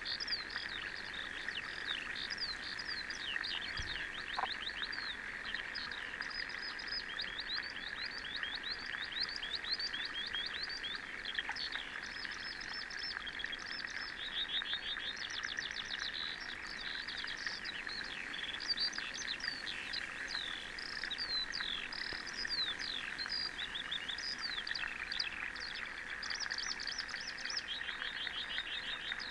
4 channel recording of little pond: hydrophones and small omni